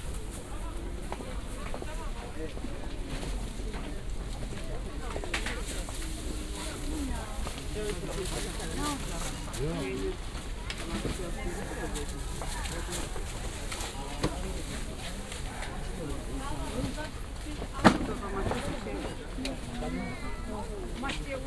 Hamburg Große Bergsstr. - fruit & veg. store, plastic bags
Altona, turkish fruits and vegetables store, outside market, sound of little plastic bags
Hamburg, Germany, 31 October 2009